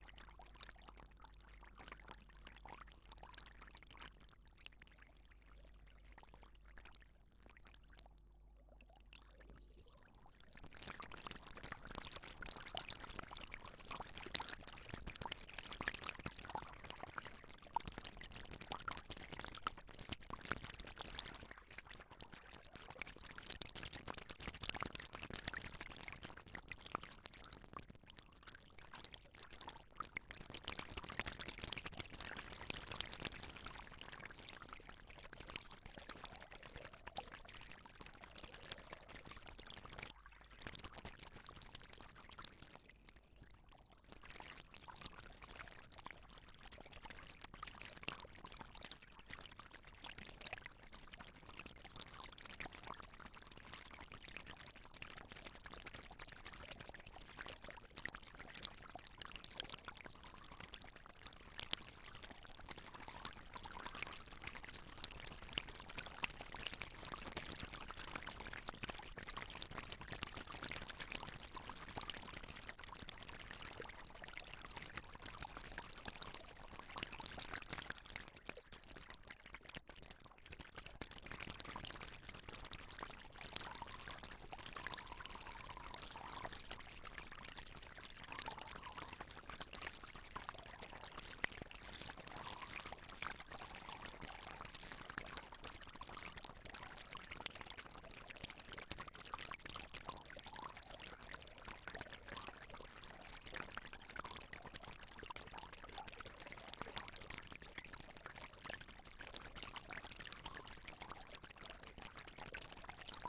Balanced contact mic immersed in the outflow of Lake Pupuke, among the lava fields